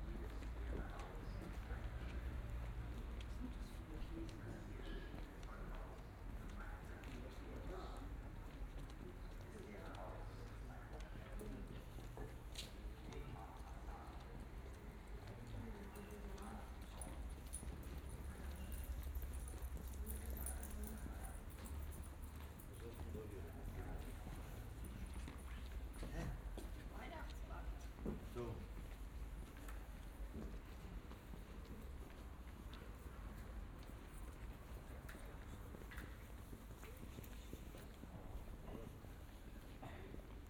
Der Leerstand spricht Bad Orb - Der Leerstand spricht walk
'Der Leerstand spricht' was a radio live performance / installation in Bad Orb. In front of empty houses of the Hauptstrasse radios were distributing the live voice, speaking texts but also inviting pedastrians to contribute their utopia of the city and the empty spaces: every empty building is a promise. Biaural recording of a walk down the street until a band is playing.